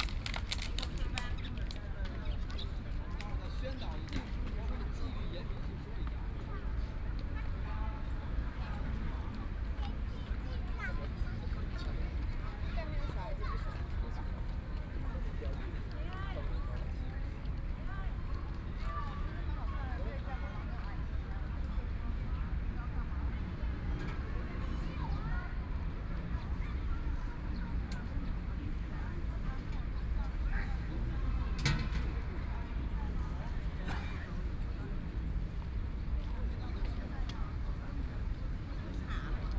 Chiang Kai-shek Memorial Hall, Taipei - Tourists
Square entrance, Sony PCM D50+ Soundman OKM II
台北市 (Taipei City), 中華民國, 26 May